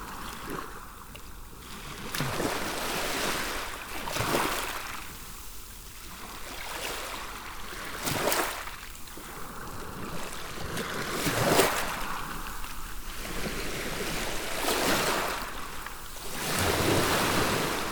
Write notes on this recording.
Sound of the sea, with waves lapping on the gravels, at pointe du Hourdel, a place where a lot of seals are sleeping.